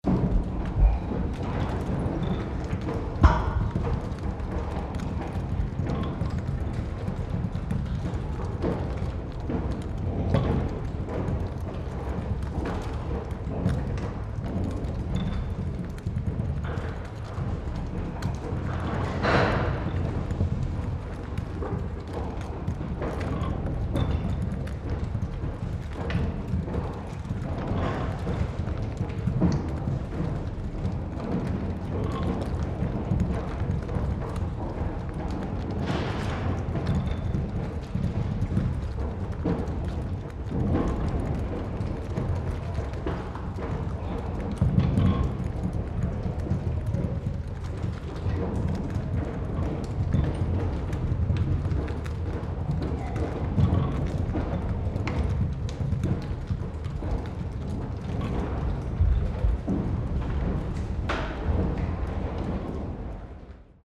schmiere auf zahnradantrieb von webmaschine zur aufbereitung der rohwolle - im industrie museum cromford
soundmap nrw/ sound in public spaces - in & outdoor nearfield recordings